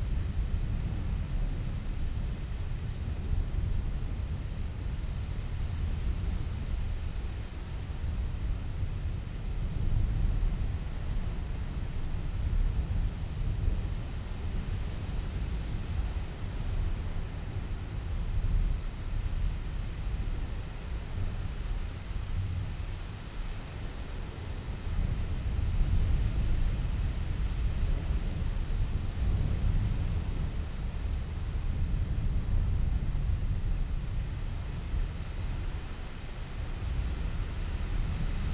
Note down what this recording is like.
inside church ... outside thunderstorm ... open lavalier mics on T bar on mini tripod ... background noise ...